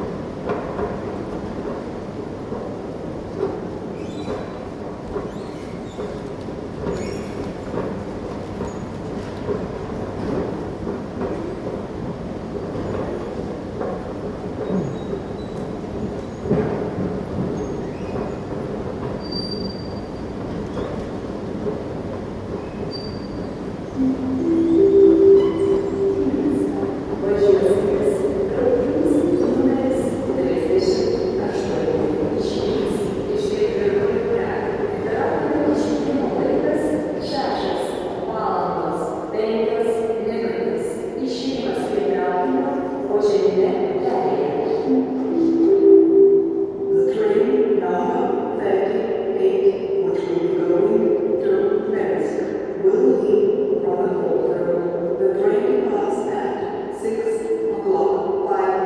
{"date": "2011-11-27 06:20:00", "description": "Vilnius train station announcements in the early moring", "latitude": "54.67", "longitude": "25.28", "altitude": "145", "timezone": "Europe/Vilnius"}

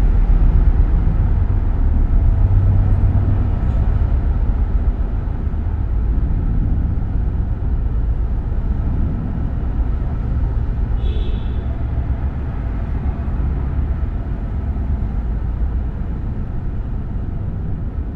{"title": "Ave, New York, NY, USA - Inside a water pipe on Riverside Drive Viaduct", "date": "2019-11-10 13:57:00", "description": "Sounds of Riverside Drive Viaduct recorded by placing a zoom h6 inside a water pipe.", "latitude": "40.82", "longitude": "-73.96", "altitude": "4", "timezone": "America/New_York"}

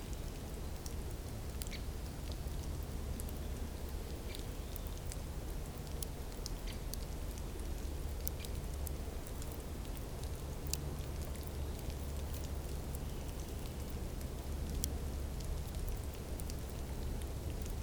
{"title": "Genappe, Belgique - Ferns growing", "date": "2017-04-09 15:30:00", "description": "See above description.", "latitude": "50.58", "longitude": "4.50", "altitude": "128", "timezone": "Europe/Brussels"}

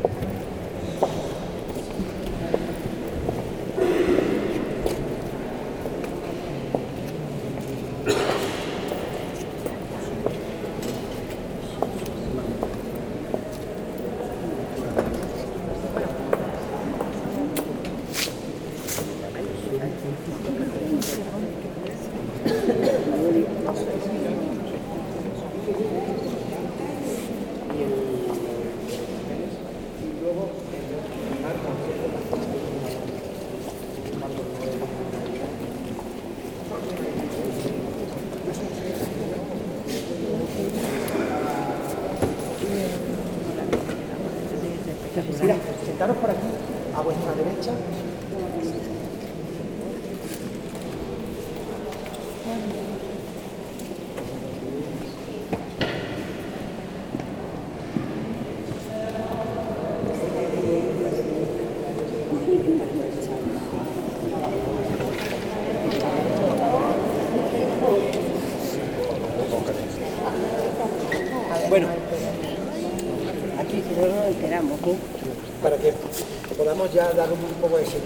{"title": "Leuven, Belgique - Leuven church", "date": "2018-10-13 11:45:00", "description": "Tourist guidance in spanish, inside the Leuven church.", "latitude": "50.88", "longitude": "4.70", "altitude": "39", "timezone": "Europe/Brussels"}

